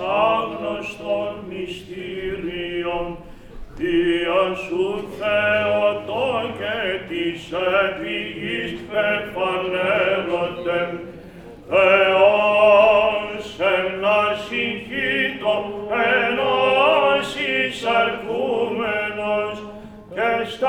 Heybeli Island, Istanbul - Vespers in the chappel of the theological school Chalki